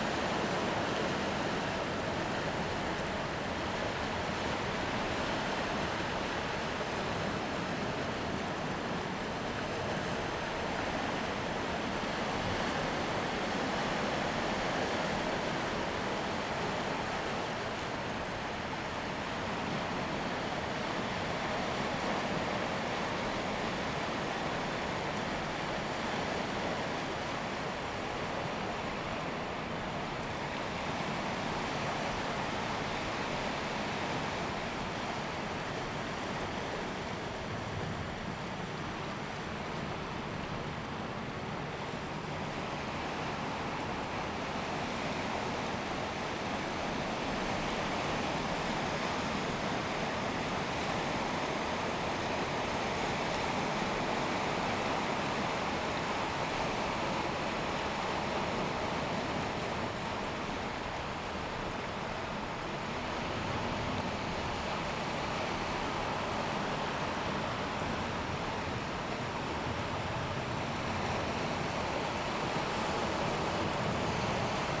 大屯溪, 淡水區, New Taipei City - At the river to the sea
At the river to the sea, the waves
Zoom H2n MS+H6 XY
New Taipei City, Tamsui District, 15 April 2016, 09:22